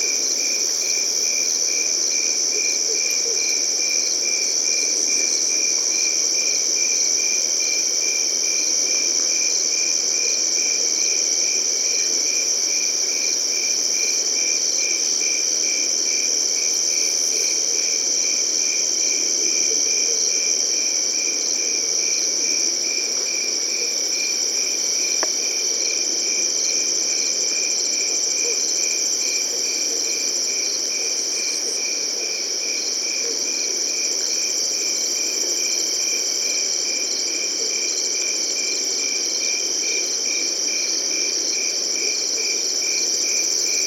The Funny Farm, Meaford, ON, Canada - Late night summer insects
Crickets and grasshoppers in the wheat fields. Telinga stereo parabolic mic with Tascam DR-680mkII recorder.